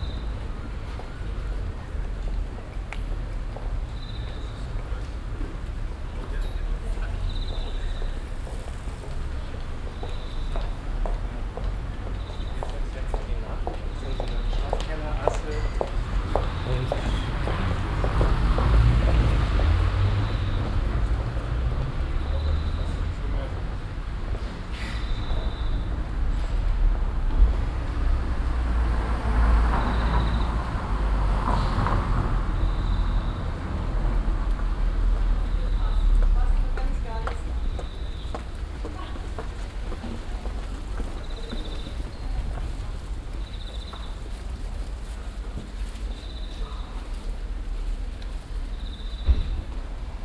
cologne, mittelstrasse, mittags
soundmap: köln/ nrw
mittelstrasse, mittags, schritte, verkehr, am ende die glocken der apostelnkirche
project: social ambiences/ listen to the people - in & outdoor nearfield recordings